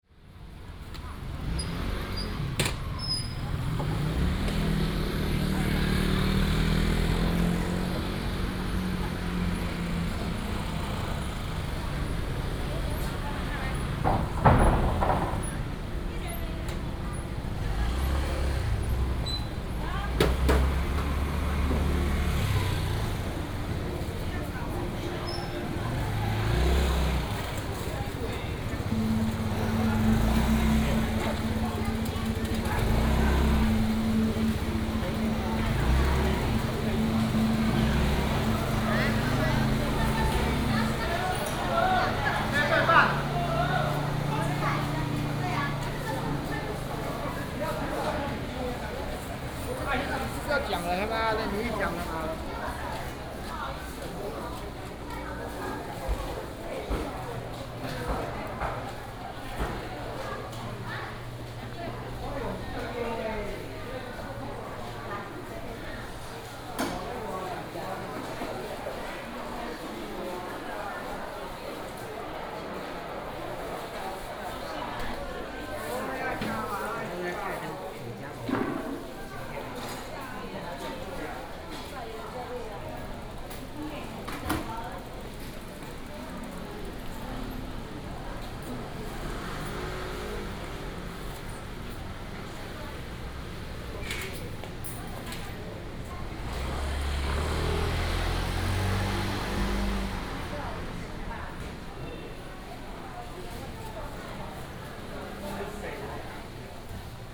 華勛市場, Zhongli Dist., Taoyuan City - Traditional market
Traditional market, Traffic sound, Binaural recordings, Sony PCM D100+ Soundman OKM II
29 November 2017, 08:30